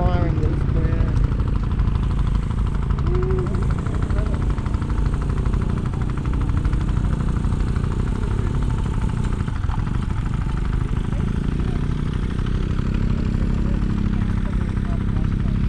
Steam Rally, Welland, Worcestershire, UK - Rally
A walk around part of the Welland Steam Rally including road building, ploughing, engines modern and old, voices, brass band, steam organ.